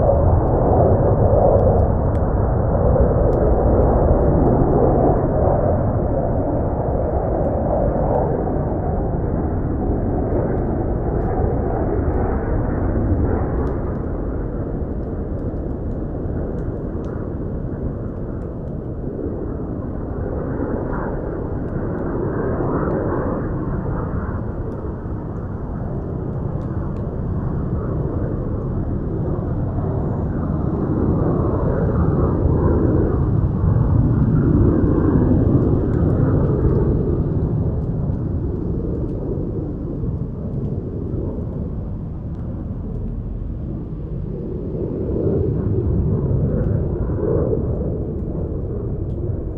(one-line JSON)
{"title": "GRUES CENDRÉES EN MIGRATION NOCTURNE SAINT PIERRE DU MONT - 63 Rue Jules Ferry, 40280 Saint-Pierre-du-Mont, France - GRUES CENDRÉES DANS LA BRUME", "date": "2021-11-23 23:50:00", "description": "Extraordinaire théâtre des oreilles que ces Grues Cendrées en pleine migration qui passaient ce soir là par Saint Pierre du Mont à proximité de Mont de Marsan. Le brouillard est établie, comme une brume, et seuls leurs chants sont présent et même très présent! Spectacle sublime et incroyable dans un cadre urbain!", "latitude": "43.88", "longitude": "-0.52", "altitude": "76", "timezone": "Europe/Paris"}